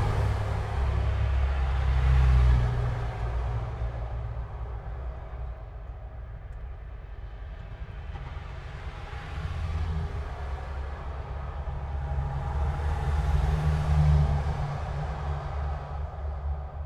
landfill site, traffic heard in a manhole. the air is full of bad smell. waiting for the bus to escape.
(SD702, DPA4060)